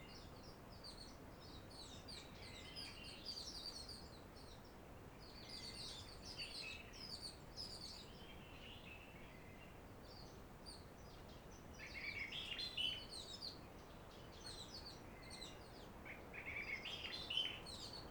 Chem. de la Roche Merveilleuse, Cilaos, Réunion - 20210909-1307-passages-d-oiseau-cul-blanc
La Réunion, France